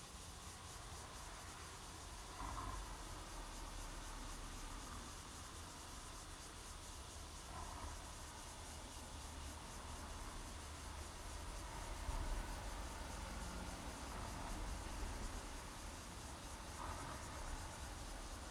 楊梅區民富路三段, Taoyuan City - Next to the railroad tracks
Next to the railroad tracks, Traffic sound, Cicadas, The train passes by, Zoom H2n MS+XY